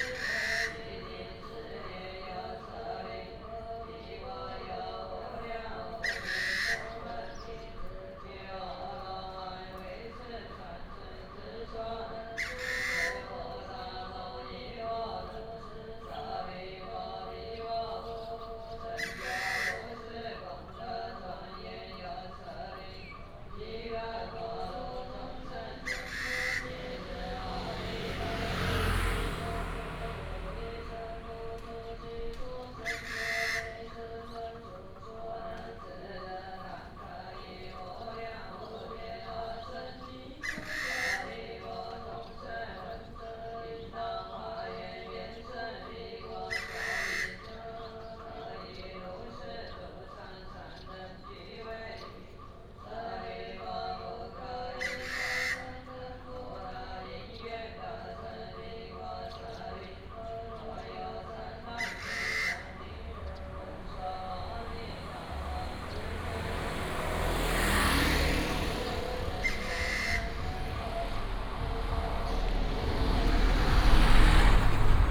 太麻里街, Taimali Township - Funeral chanting and Bird
Street in the village, Funeral chanting, Bird call, Traffic sound